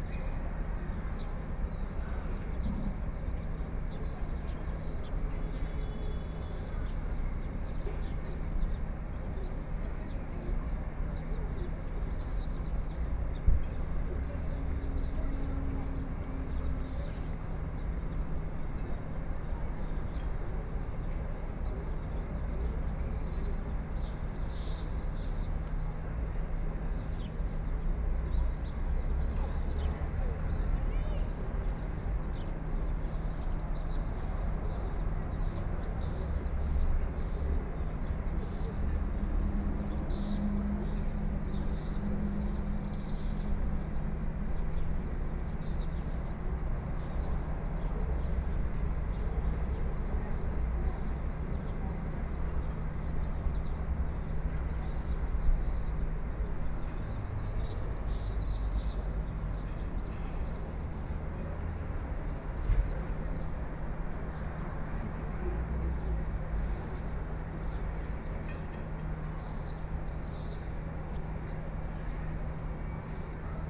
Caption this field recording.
swallows, from the walls of the ancient town. Beautiful and picturesque walk